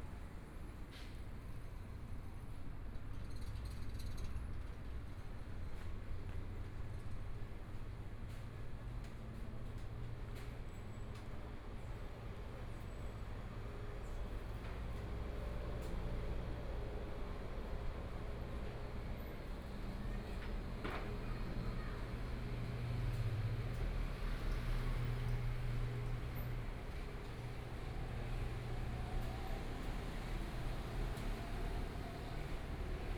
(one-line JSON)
{"title": "中山區行仁里, Taipei City - In the Street", "date": "2014-01-20 14:51:00", "description": "walking In the Street, Traffic Sound, Being compiled and ready to break the market, Binaural recordings, Zoom H4n+ Soundman OKM II", "latitude": "25.07", "longitude": "121.54", "timezone": "Asia/Taipei"}